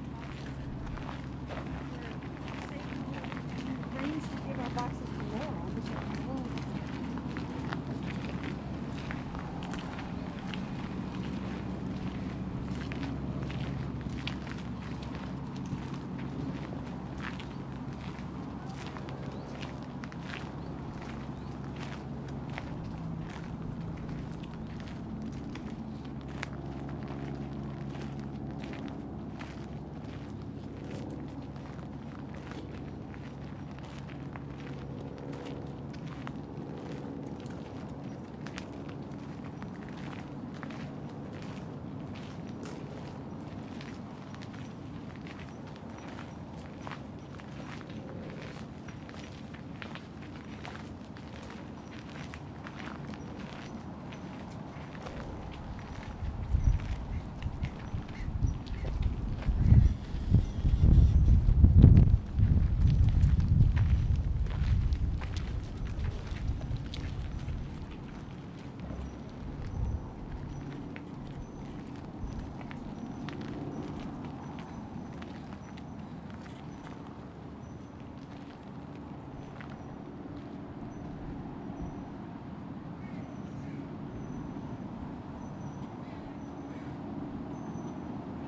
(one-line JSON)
{"title": "Greenlake Park, Seattle Washington", "date": "2010-07-18 12:30:00", "description": "Part three of a soundwalk on July 18th, 2010 for World Listening Day in Greenlake Park in Seattle Washington.", "latitude": "47.67", "longitude": "-122.34", "altitude": "52", "timezone": "America/Los_Angeles"}